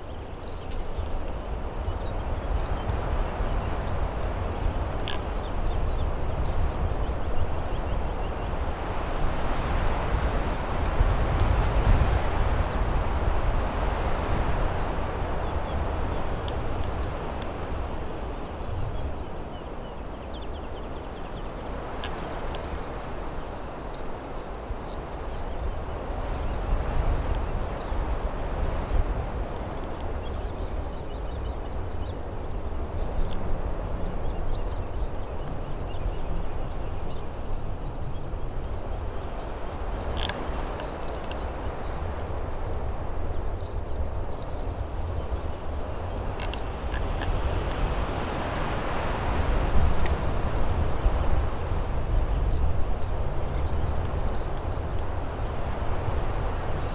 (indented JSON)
{
  "title": "IJkbasis Loenermark - Low Pressure at IJkbasis",
  "date": "2021-02-05 13:44:00",
  "description": "Simultaneous geophone and M-S recording on a windy day. The IJkbasis was built in the 1950's\nas a triangulation point. The location was chosen because of the stability of the ground. WLD 2021",
  "latitude": "52.08",
  "longitude": "6.00",
  "altitude": "40",
  "timezone": "Europe/Amsterdam"
}